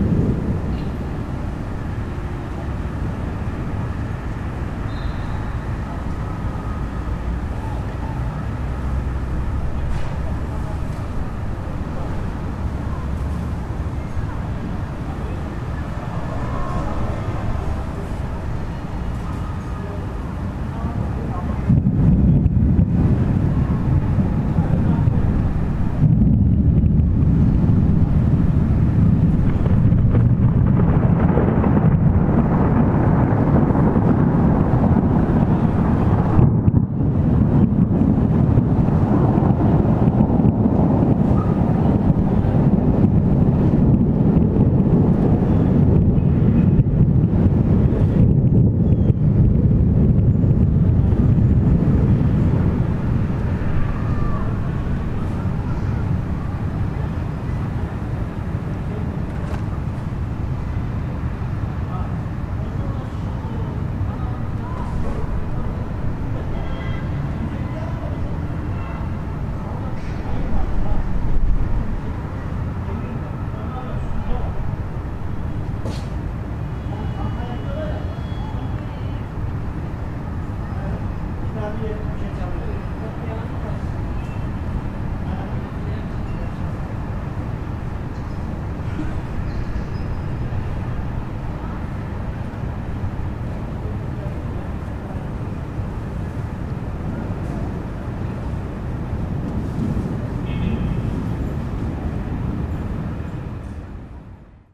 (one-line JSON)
{"title": "Istanbul, thunderstorm approaching the city", "date": "2010-09-26 17:24:00", "description": "The Bosphorus opens to Marmara sea in the south. Any thundersturm that wants to get into the city to sweep pouring rain through the dirty streets first needs to gather forces in an electromagnetic assembly in order to crush and strike at one time. Here we hear thunders gaining force while approaching the city from the open sea.", "latitude": "41.05", "longitude": "29.00", "altitude": "66", "timezone": "Europe/Istanbul"}